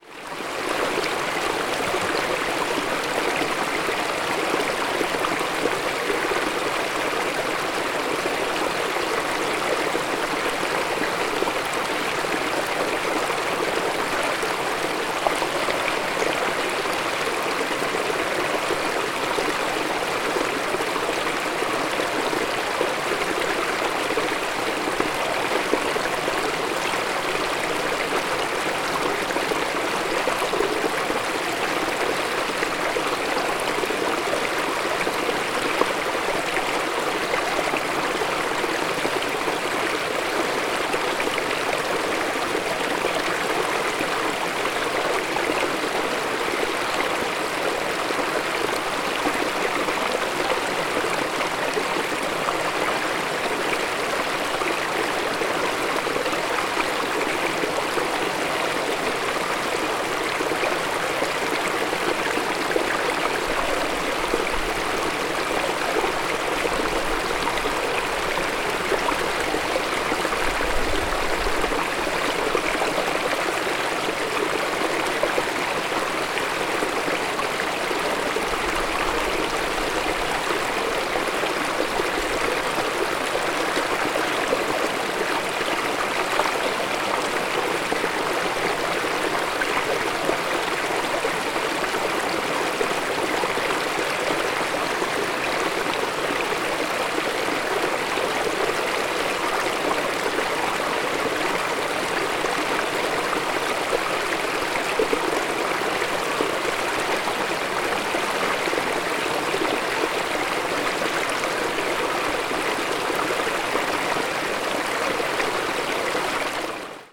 creek in the mountains north of Estepona, Andalucia, Spain
Estepona, Spain, 31 March 2011